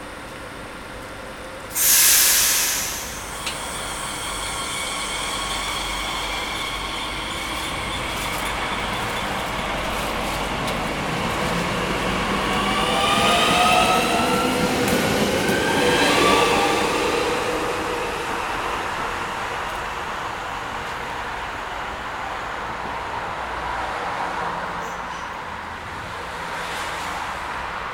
Gare de Précy-sur-Oise, Précy-sur-Oise, France - Entrée du train en gare de Précy
Arrival and departure of the train from Creil to Pontoise, with car traffic on the adjacent D92 road.
(Zoom H5 + MSH-6)
France métropolitaine, France, 7 January 2022